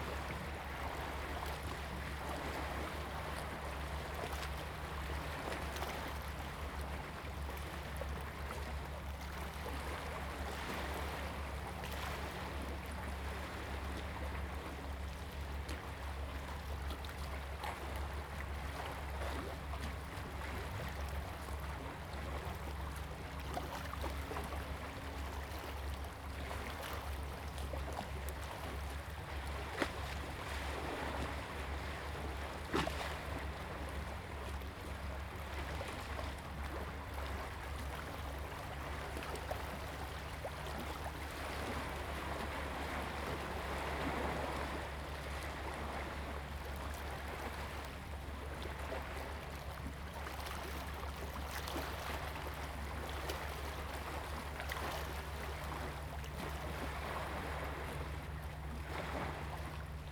沙港漁港, Huxi Township - In the dock
In the dock, Waves and tides
Zoom H2n MS+XY